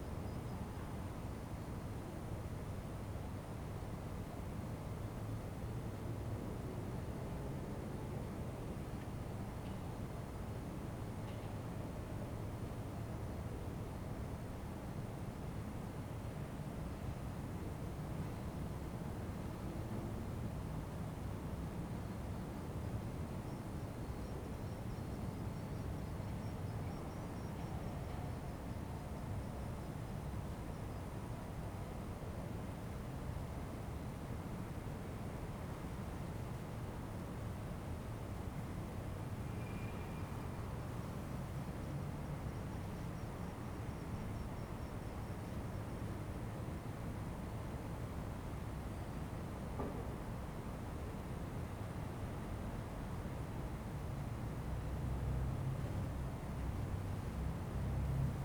Ascolto il tuo cuore, città. I listen to your heart, city. Several chapters **SCROLL DOWN FOR ALL RECORDINGS** - High summer stille round midnight in the time of COVID19 Soundscape
"High summer stille round midnight in the time of COVID19" Soundscape
Chapter CLXXIX of Ascolto il tuo cuore, città. I listen to your heart, city
Sunday, August 24th 2021; more then one year and four months after emergency disposition (March 10th 2020) due to the epidemic of COVID19.
Start at 00:11 a.m. end at 00:58 p.m. duration of recording 47'00''.